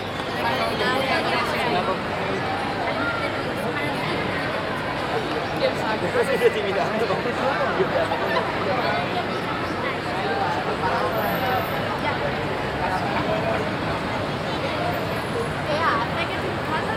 At the Plaza de la Encarnation in the evening. The souns atmospher of people sitting and talking on the stairs of the architecture.
international city sounds - topographic field recordings and social ambiences